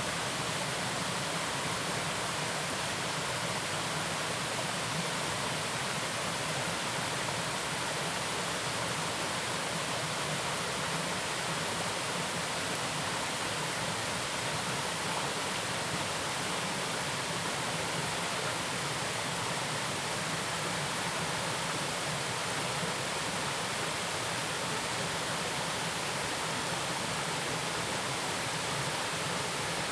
Fenglin Township, Hualien County - stream sound
waterfall, stream sound
Zoom H2n MS+XY +Sptial Audio